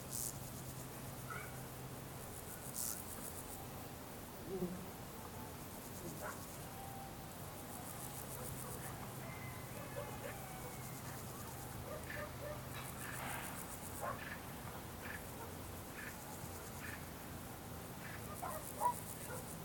Unnamed Road, Sic, Romania - Resting on the grass on a summer day
Recording made while sitting on the grass in the summer in a small village in Transylvania.